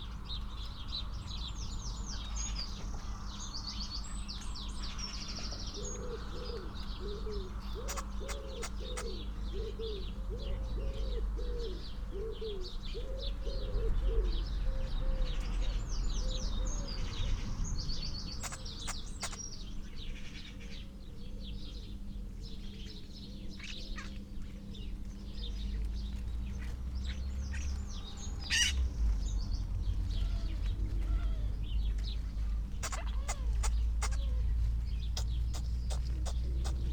Chapel Fields, Helperthorpe, Malton, UK - starling gathering soundscape ...
starling gathering soundscape ... SASS on the floor facing skywards under hedge where the birds accumulate ... whistles ... clicks ... creaks ... purrs ... grating ... dry rolling and rippling calls and song from the starlings ... bird calls ... song ... from ... collared dove ... wood pigeon ... wren ... crow ... magpie ... dunnock ... background noise from traffic etc ...